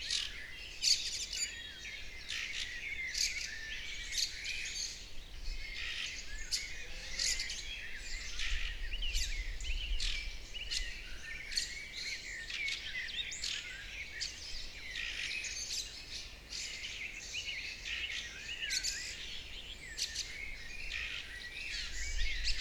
Dawn Chorus recorded and from different device broadcasted for the Reveil 2020. Standing in the garden of the baroque priest house, near the church of saint Wenceslaw and cemetery. Windy, cold and occasionally showers.
Jihovýchod, Česká republika, May 2020